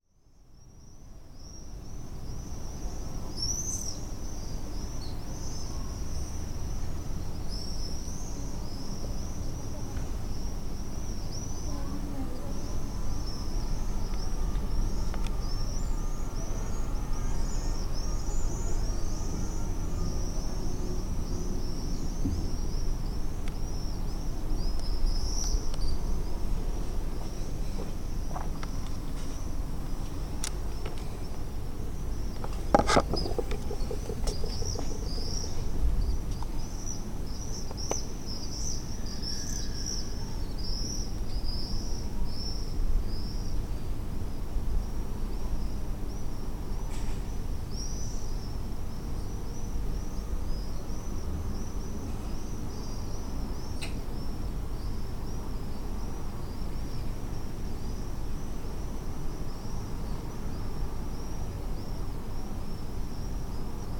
20 rue Monadey - Victoire, Bordeaux, France - WLD 2014 swifts just before they go to sleep
the swifts at my window. they are just leaving. + my clock. (Marantz PMD 661 Int Mic)
16 July, 21:00